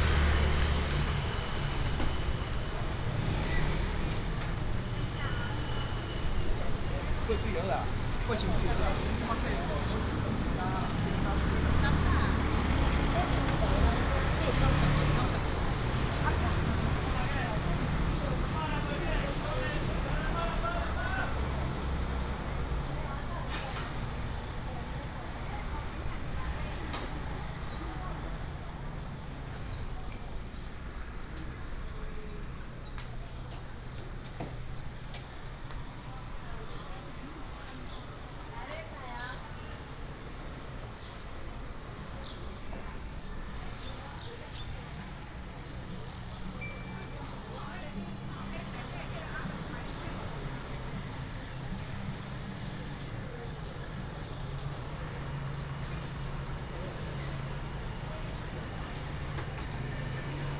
Zhongzheng Rd., East Dist., Chiayi City - Walking on the road
Walking in the traditional market, Traffic sound, Bird sound
Chiayi City, Taiwan, 18 April 2017